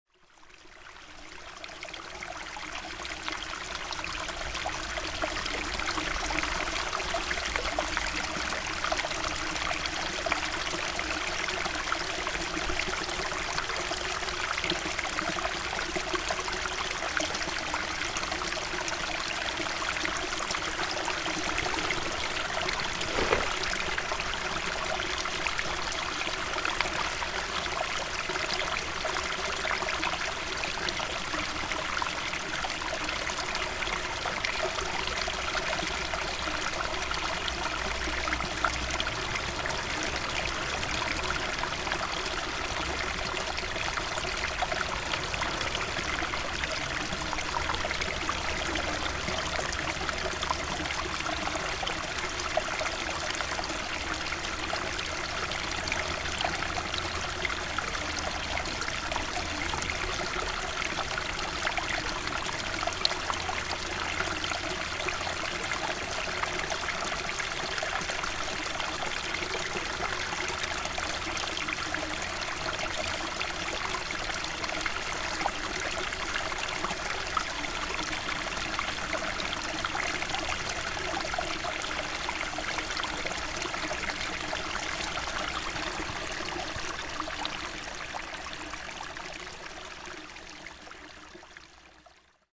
Sainte-Énimie, France - Stream
After an horrible night in the tempest, a peaceful little stream, hurtling the mountain.
5 March 2015